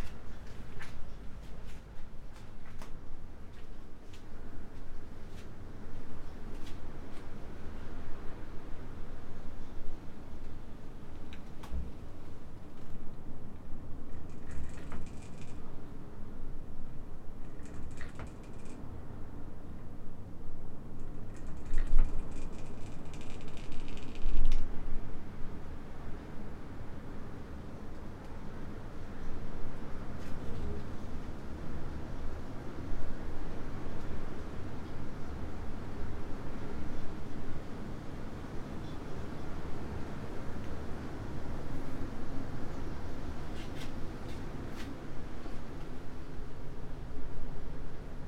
room, Novigrad, Croatia - doors
room, wind, thunder, rain, creaking with doors, steps ...